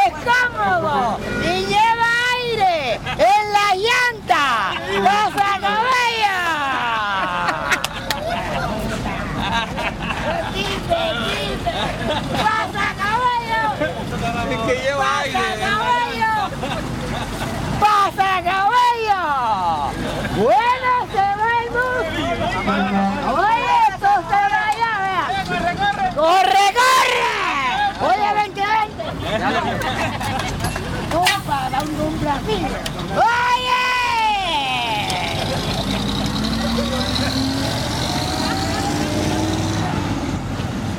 Cartagena, Bomba del Amparo, PARADA DE BUSES
Traditional Bus sparring to anounce and collect passengers for pasacaballos.